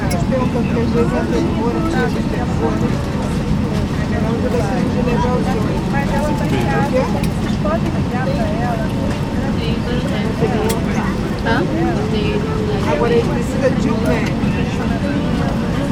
{"title": "Galeão, Rio de Janeiro, Brazil - No avião, indo para Fortaleza", "date": "2012-06-19 18:30:00", "description": "Dentro do avião no aeroporto Galeão no Rio de Janeiro, aguardando o vôo para Fortaleza.", "latitude": "-22.81", "longitude": "-43.25", "altitude": "16", "timezone": "America/Sao_Paulo"}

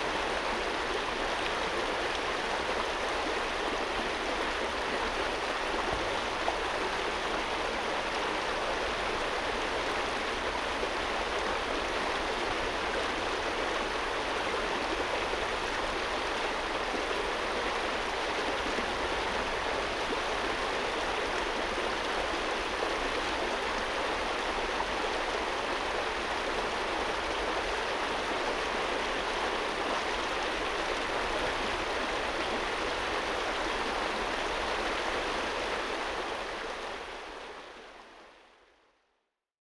{"title": "대한민국 서울특별시 서초구 신원동 226-9 - Yeoeui-cheon Stream", "date": "2019-09-10 18:51:00", "description": "Yeoeui-cheon, Stream Flowing\n여의천, 물살", "latitude": "37.45", "longitude": "127.06", "altitude": "50", "timezone": "Asia/Seoul"}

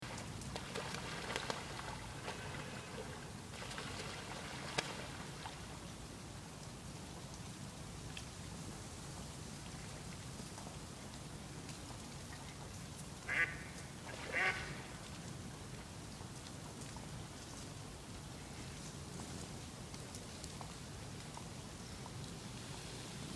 {"title": "Teich I NAWI Salzburg, Austria - Teich I", "date": "2012-10-29 13:49:00", "description": "Enten im Teich", "latitude": "47.79", "longitude": "13.06", "altitude": "423", "timezone": "Europe/Vienna"}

